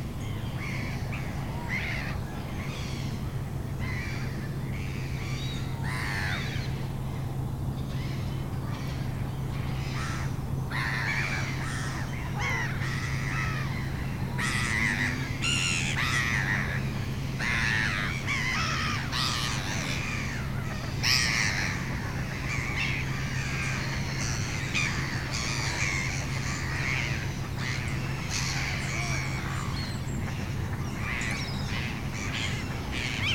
Léry, France - Seagulls
Seagulls are discussing on the pond, early morning.